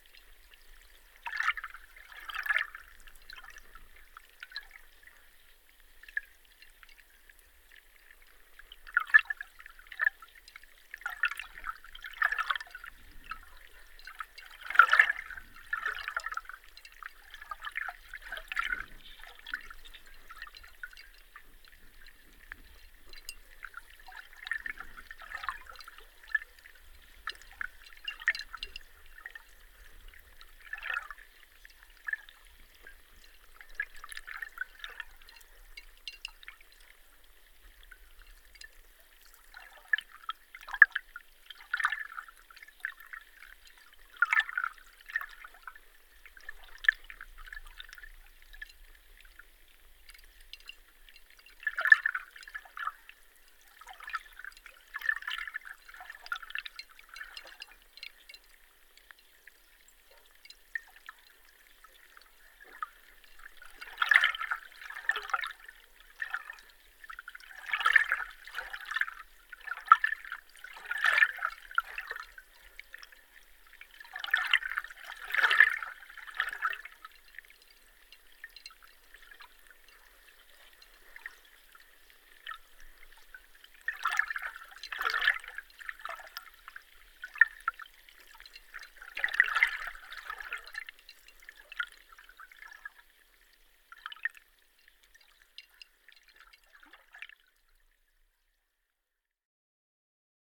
Hydrophone under the bridge on Sartai lake